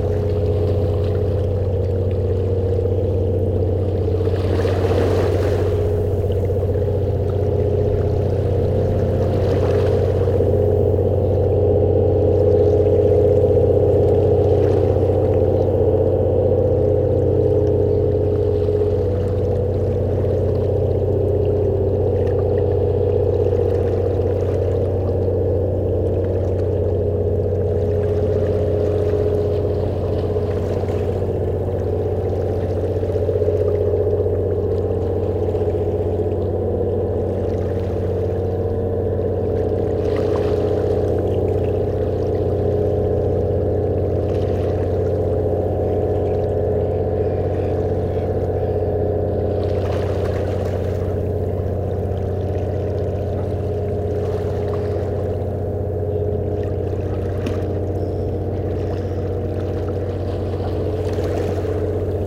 Breskens, Nederlands - Ferry leaving the harbour
On the Breskens harbour, a ferry is leaving. Princess Maxima boat is crossing the river and going to Vlissingen.